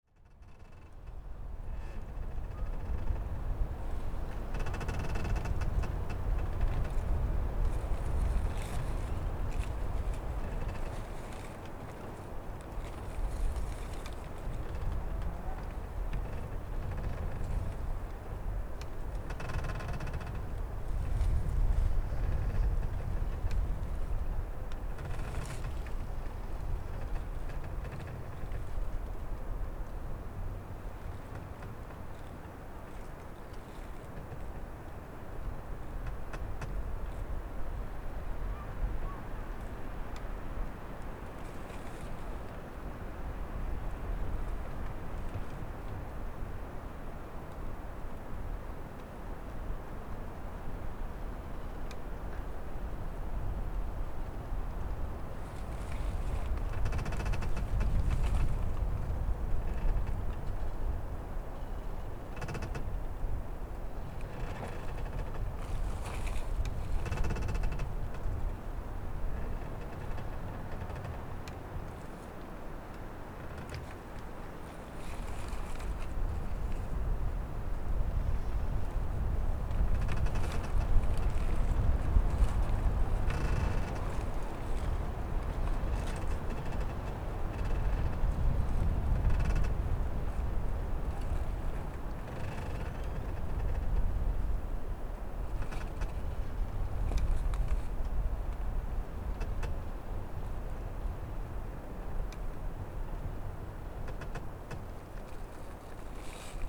{"title": "Utena, Lithuania, a broken hut", "date": "2015-04-09 17:45:00", "description": "wind play in the brokem hut in the wood", "latitude": "55.52", "longitude": "25.63", "altitude": "123", "timezone": "Europe/Vilnius"}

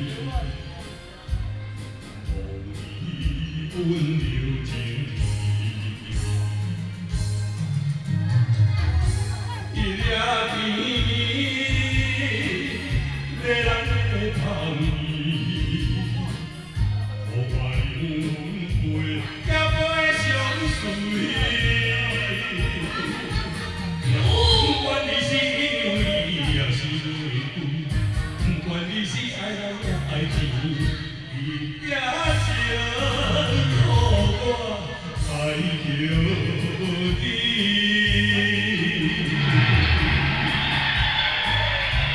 {"title": "清泉里保安宮, Shalu Dist., Taichung City - Community party", "date": "2017-10-09 20:12:00", "description": "In the temple, Traffic sound, Firecrackers and fireworks, Community party, Binaural recordings, Sony PCM D100+ Soundman OKM II", "latitude": "24.24", "longitude": "120.61", "altitude": "201", "timezone": "Asia/Taipei"}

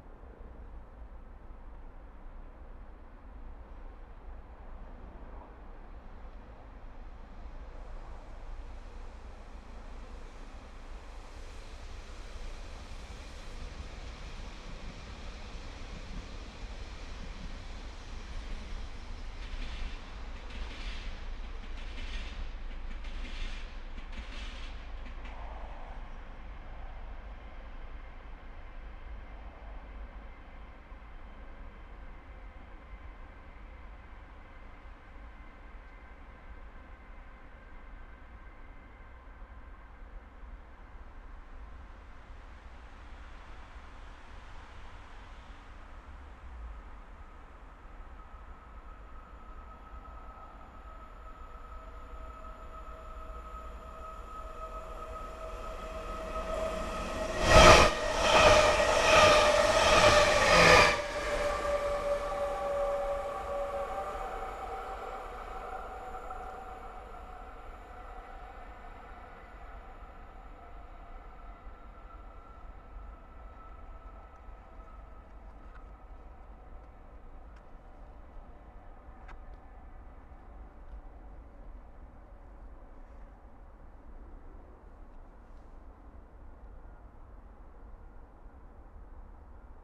{"title": "Helsinki, Finland - Trains between Helsinki mainstation and Pasila", "date": "2015-10-26 20:00:00", "description": "Trains manoeuvring between Helsinki main station and Pasila on 26.10.2015, around 20:00h.\nRecorded with a LOM stereo pair of Omni microphones and (separate file but simultaneously) an Electrosluch 3 to record electrostatics. Minimal editing done, no cutting.", "latitude": "60.19", "longitude": "24.94", "altitude": "40", "timezone": "Europe/Helsinki"}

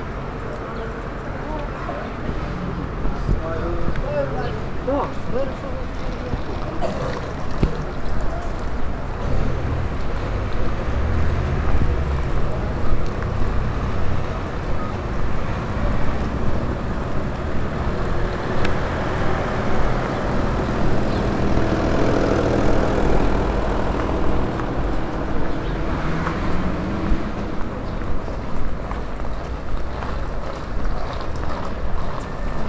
{"title": "Strada Piata Amzei, Bucharest", "date": "2011-11-20 14:13:00", "description": "street, talking, traffic", "latitude": "44.44", "longitude": "26.09", "altitude": "91", "timezone": "Europe/Bucharest"}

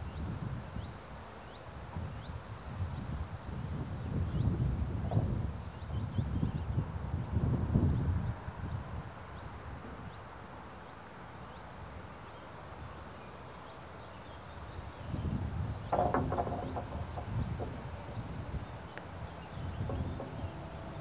{"title": "Morasko, at the rose brook road - concrete fence", "date": "2014-06-19 14:45:00", "description": "a fence made of concrete slabs. most slabs are loose, knocking about in their fastenings even at a slightest breeze.", "latitude": "52.47", "longitude": "16.91", "altitude": "93", "timezone": "Europe/Warsaw"}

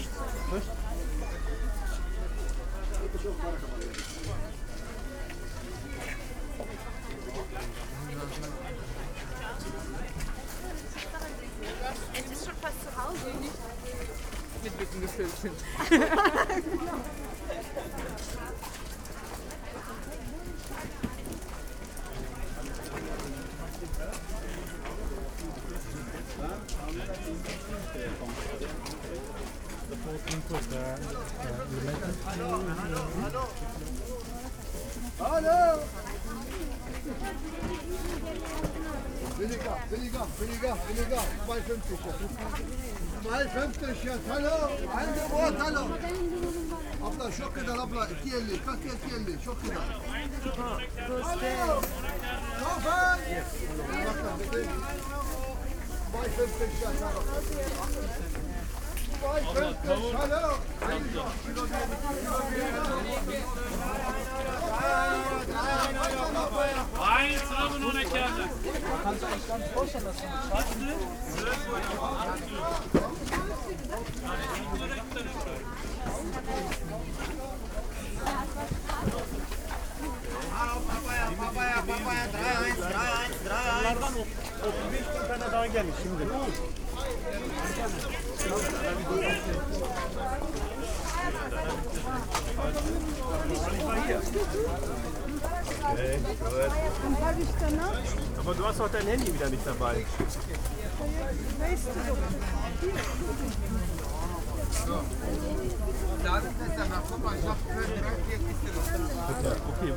{"title": "Maybachufer, weekly market - market walk", "date": "2012-03-02 17:10:00", "description": "walk through crowded market. pipe player the entrance. the hour before it closes, many people come here to get cheap fruits and vegetables.\n(tech: SD702 DPA4060 binaural)", "latitude": "52.49", "longitude": "13.42", "altitude": "38", "timezone": "Europe/Berlin"}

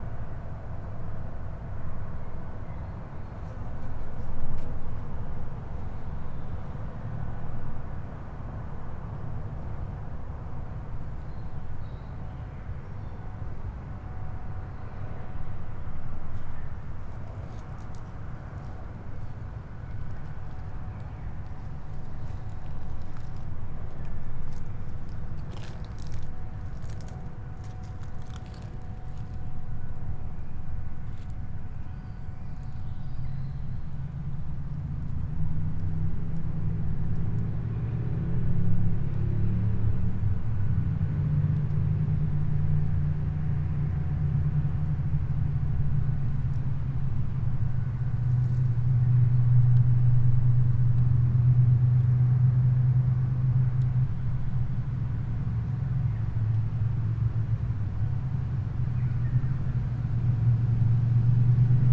{"title": "Panovec, Nova Gorica, Slovenija - Gozd in bolj ali manj bližnji promet", "date": "2017-06-07 10:20:00", "description": "Flies, \"far away\" traffic, grass brushes.\nRecorded with H5n + AKG C568 B", "latitude": "45.95", "longitude": "13.65", "altitude": "118", "timezone": "Europe/Ljubljana"}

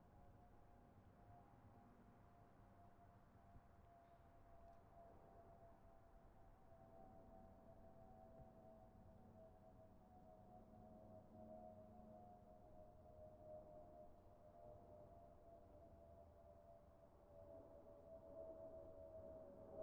{"title": "Offenbach am Main, Germany - Flyover", "date": "2012-03-19 20:30:00", "description": "Outside of hotel", "latitude": "50.10", "longitude": "8.78", "altitude": "111", "timezone": "Europe/Berlin"}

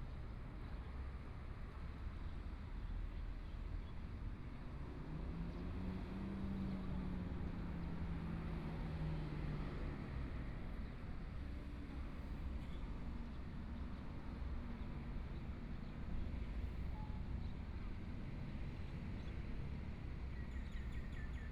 {"title": "花蓮市, Taiwan - In the Square", "date": "2014-02-24 15:11:00", "description": "In the Square, Birds singing, Traffic Sound\nBinaural recordings\nZoom H4n+ Soundman OKM II", "latitude": "23.97", "longitude": "121.61", "timezone": "Asia/Taipei"}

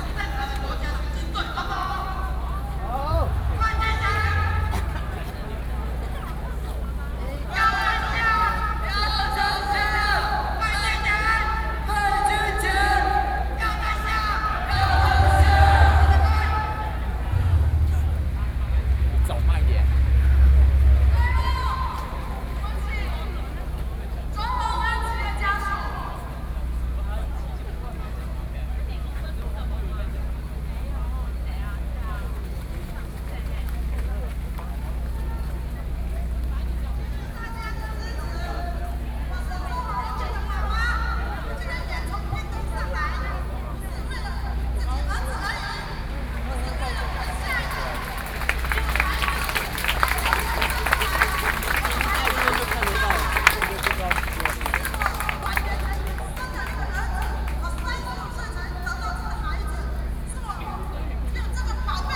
{"title": "Ketagalan Boulevard - Protest", "date": "2013-08-03 20:45:00", "description": "Protest against the government, A noncommissioned officer's death, Sony PCM D50 + Soundman OKM II", "latitude": "25.04", "longitude": "121.52", "altitude": "11", "timezone": "Asia/Taipei"}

